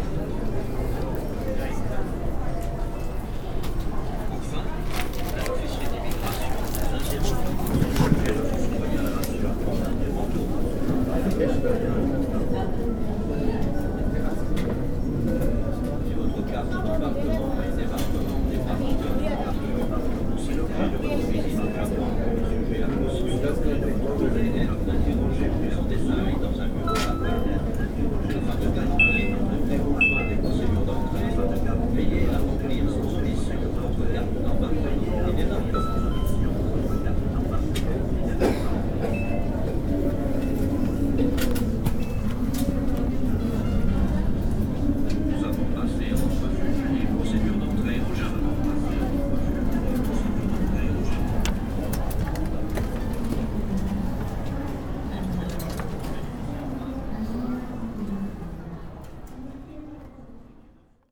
tokyo, airport, passport control
at the passport control gate after arriving at the airport - fingerprint computer and some background sounds
international city maps - topographic field recordings and social ambiences